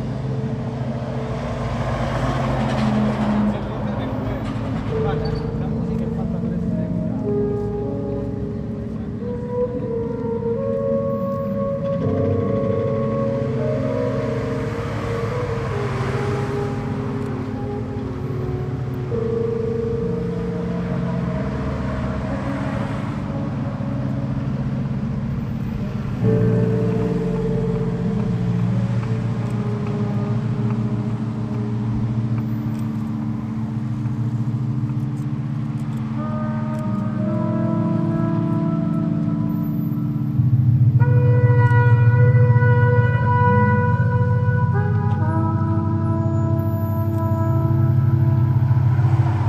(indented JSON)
{
  "title": "Friedrichshain, Berlin, Germany - unknown artist with trumpet drone by the Berlin Wall & jackhammer in a distance",
  "date": "2014-07-14 16:33:00",
  "description": "recording of an unknown artist playing trumpet with effects by the Berlin Wall, also sound of the jackhammer in a distant construction side, to me perfect soundtrack of a Berlin now",
  "latitude": "52.51",
  "longitude": "13.44",
  "altitude": "34",
  "timezone": "Europe/Berlin"
}